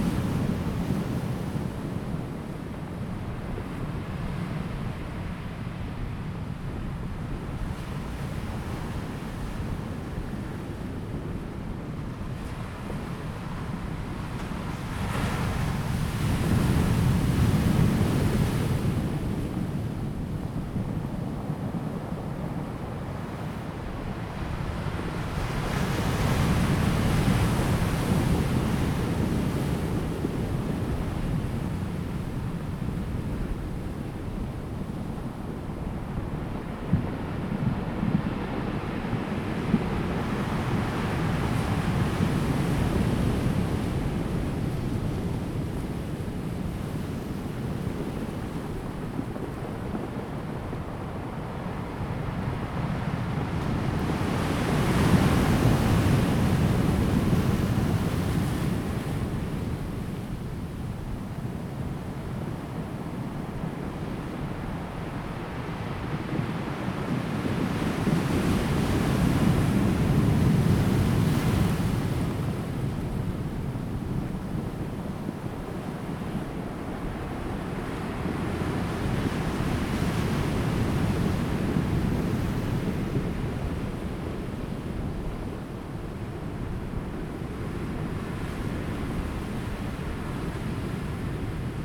Sound of the waves, Rolling stones, wind
Zoom H2n MS+XY
Taitung County, Daren Township, 台26線, 23 March